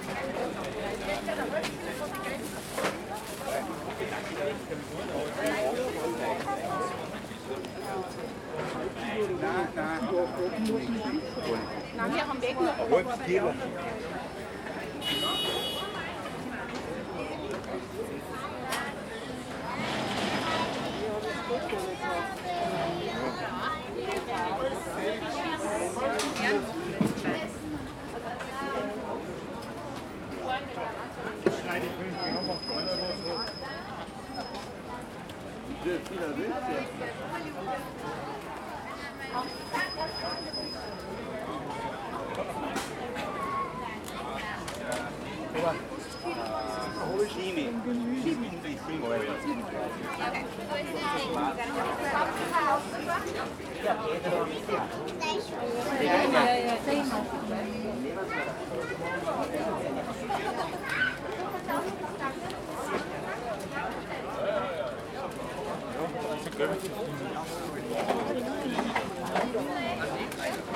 Wochenmarkt in Salzburg, jeden Donnerstag. Weekly market in Salzburg, every Thursday
8 July 2021, 09:56